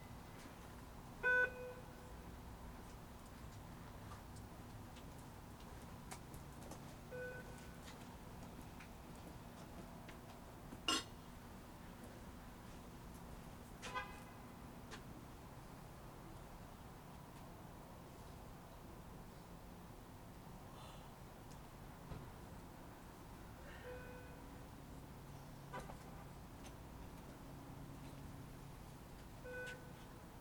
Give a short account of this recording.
Periodic beeps from overhead speakers along the Edmonds train platform warn commuters of the impending arrival of the southbound Everett-Mukilteo-Edmonds-Seattle passenger train, called the "Sounder." People can be heard queuing up to board the four cars, headed to exciting jobs downtown. The train pulls in, loads, and continues on its way.